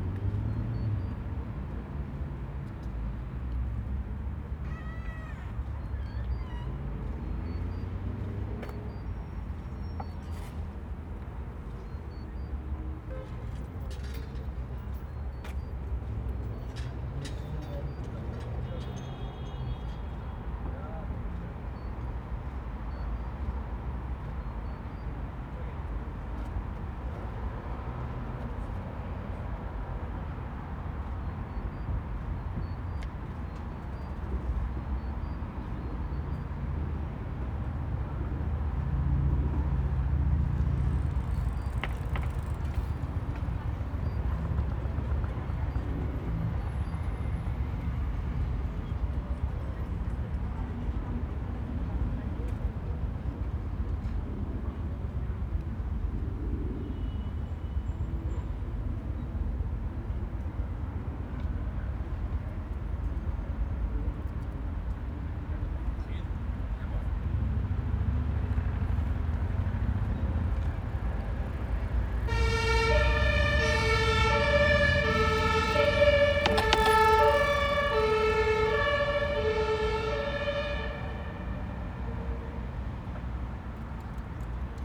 View from Imbiss 'Oase'. The exact opposite of nature, Karl-Marx-Allee atmosphere, Haus der Statistik, Berlin, Germany - View from Imbiss 'Oase'. The exact opposite of nature.
Beside the Imbiss, 2 men with 2 beers. The tiny place is dwarfed by the expanse of big streets, high concrete buildings, derelict multi-story blocks from the DDR and active construction sites. The men chat a little. A cyclist passes close by causing a metal strip on the pavement to crack loudly. A photo shoot with two young models (guys) gorging on pizza in front of a shocking pink paper backdrop is taking place. A perfectly slim assistant in a short yellow dress gazes at her phone. Totally surreal. Siren blaring ambulances speed by often. Trees are regimental in precise rows. The exact opposite of nature.
Deutschland, September 2021